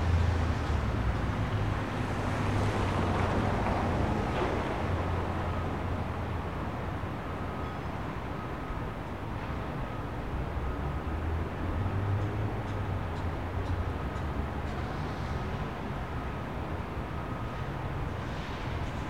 An average hour on a typical day in the Seattle business district. I walked all over downtown listening for interesting acoustic environments. This one offered a tiny patch of greenery (with birds) surrounded by a small courtyard (with pedestrians) and a large angled glass bank building behind, which broke up the reflections from the ever-present traffic.
Major elements:
* Cars, trucks and busses
* Pedestrians
* Police and ambulance sirens
* Birds (seagulls and finches)
* Commercial and private aircraft
Washington, United States of America